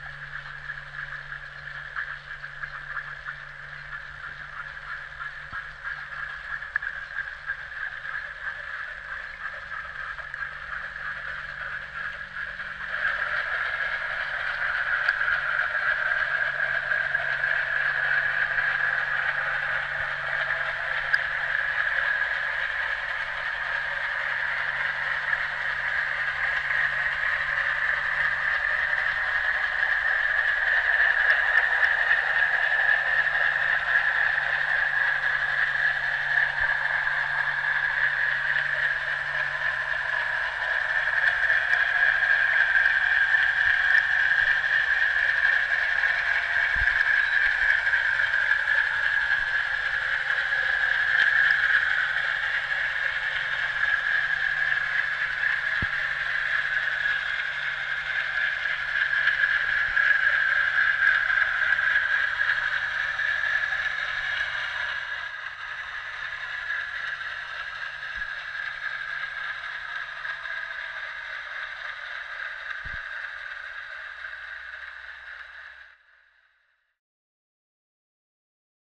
Trakai, Lithuania, underwater listening
hydrophone underwater recording. the tourist boat approaching...
3 June 2018, 12:15